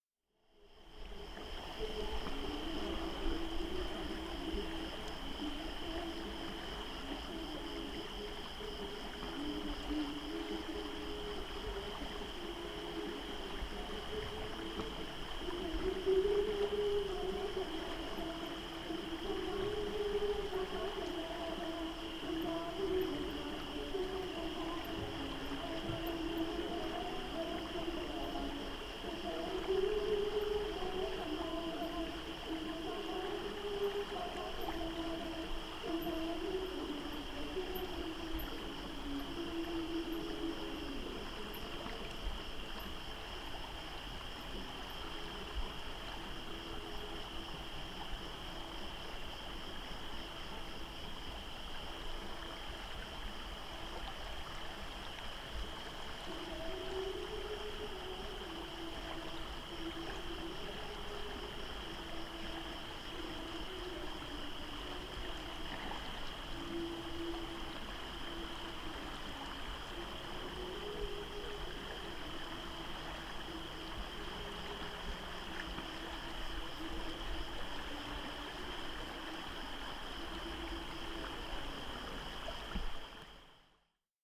Noite em Noagozelo, junto ao rio. Mapa Sonoro do rio Douro. Night soundscape ant Nagozelo do Douro. Douro River Sound Map
18 August 2010